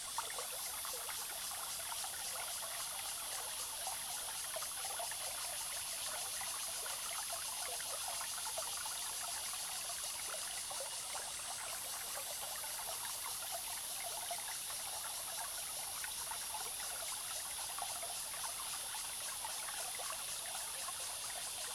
種瓜坑溪, 成功里Puli Township - Stream sound
The sound of the stream
Zoom H2n MS+XY +Spatial audio
Nantou County, Taiwan